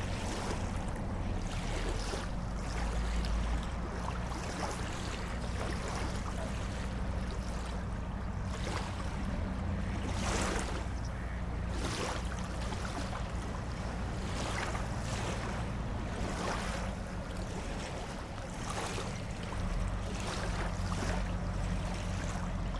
riverside waves, Torun Poland
soft wave sounds on the Vistula River
5 April 2011, ~12:00